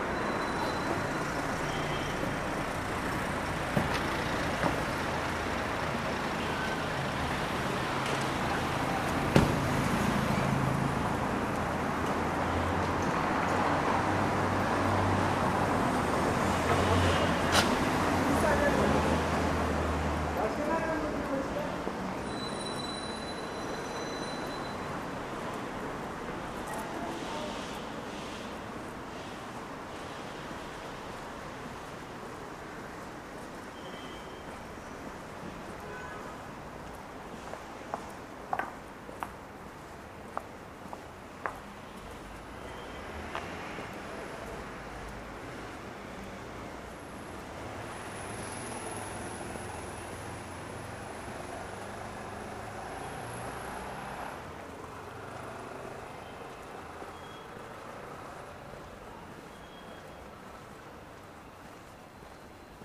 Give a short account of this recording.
Fullmoon on Istanbul, passing through a small quiet street, the sounds remain mainly behind the walls.